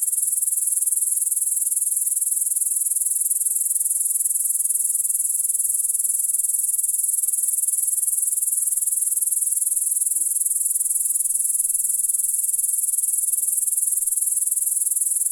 Žvejų g., Ringaudai, Lithuania - Grasshoppers
Grasshoppers chirping late in the evening in a suburban garden. Recorded with Olympus LS-10.